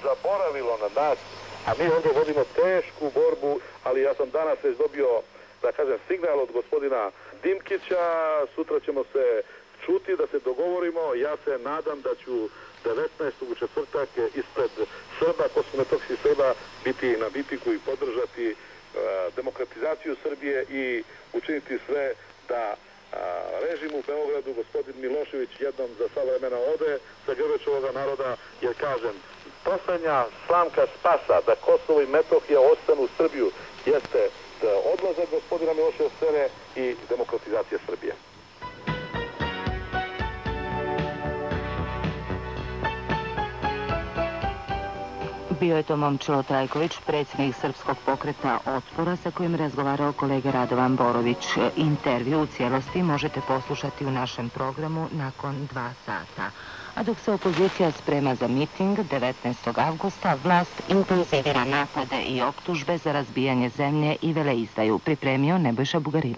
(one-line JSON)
{"title": "Radio under sanctions Belgrade, Serbia", "date": "1999-06-25 20:00:00", "description": "archive recording, from a trip to Belgrade in 1999", "latitude": "44.80", "longitude": "20.49", "altitude": "140", "timezone": "Europe/Belgrade"}